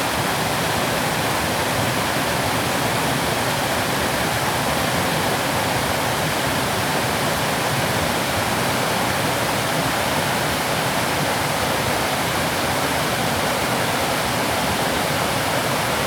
五峰旗瀑布, 礁溪鄉Yilan County - waterfalls and rivers
Waterfalls and rivers
Zoom H2n MS+ XY